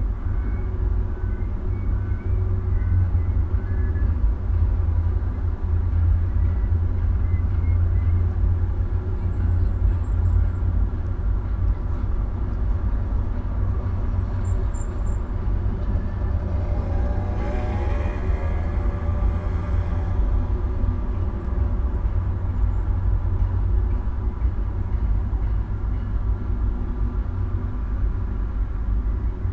Maribor, Slovenia - one square meter: electrical box
atop the concrete wall sits one ruined electrical box, with various holes in the side in which a small microphone can be placed. all recordings on this spot were made within a few square meters' radius.